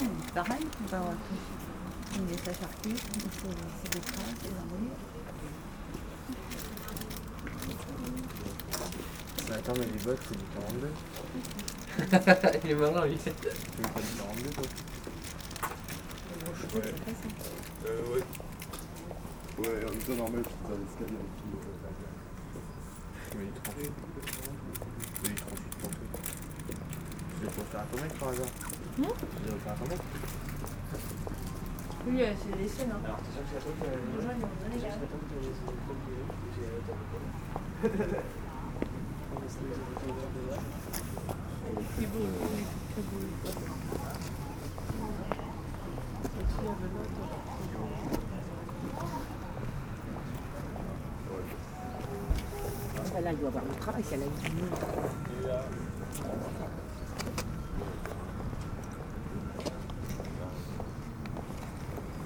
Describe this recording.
People are discussing on the platform, one gives explanations about how he made his christmas gift hidden, as it was so much uggly. The train to Paris arrives and a few time later, leaves the platform.